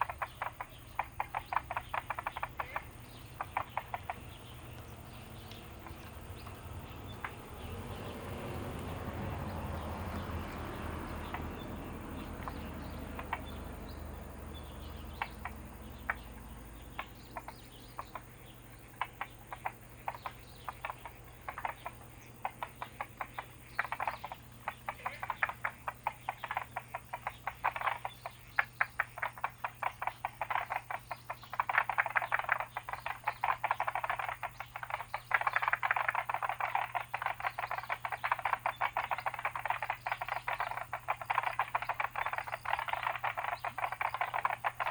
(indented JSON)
{
  "title": "草楠濕地農場, 桃米里, Puli Township - Frogs chirping",
  "date": "2016-04-25 16:07:00",
  "description": "Frogs chirping, Bird sounds\nZoom H2n MS+XY",
  "latitude": "23.95",
  "longitude": "120.92",
  "altitude": "592",
  "timezone": "Asia/Taipei"
}